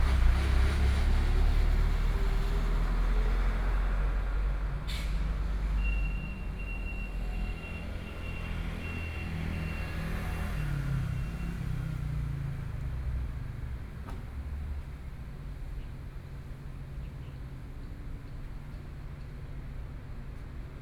Yingming St., Miaoli City - Arrival and cargo
The sound of traffic, Arrival and cargo trucks, Zoom H4n+ Soundman OKM II